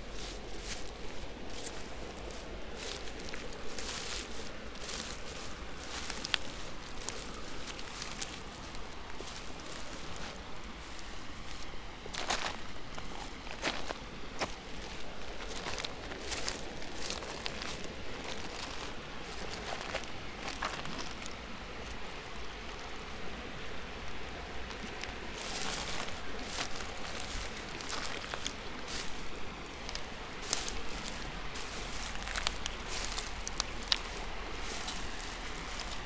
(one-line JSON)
{"title": "river Drava, Loka - dry grass, river flow, stones", "date": "2014-09-28 12:55:00", "latitude": "46.48", "longitude": "15.75", "altitude": "233", "timezone": "Europe/Ljubljana"}